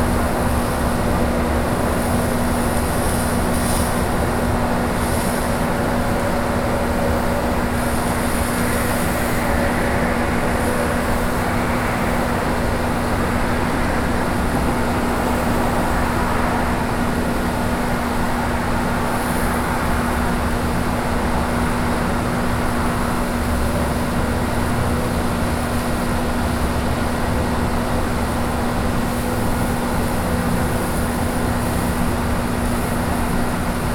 Austin, Crow Ln., Sprinkler and building air-conditioner
USA, Texas, Austin, Sprinkler, building air-conditioner, Binaural